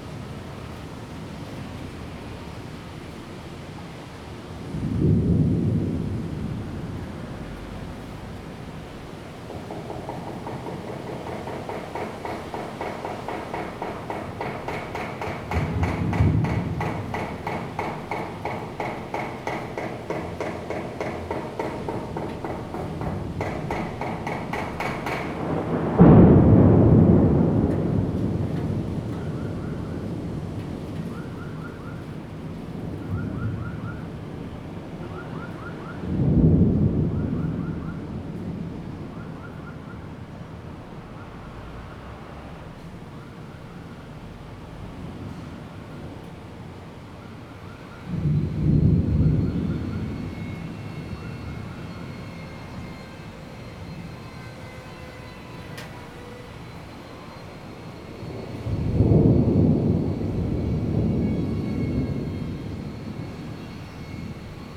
Rende 2nd Rd., Bade Dist. - Thunder
Thunderstorms, Housing construction, traffic sound
Zoom H2n MS+XY+ Spatial audio
Bade District, Taoyuan City, Taiwan, 11 July 2017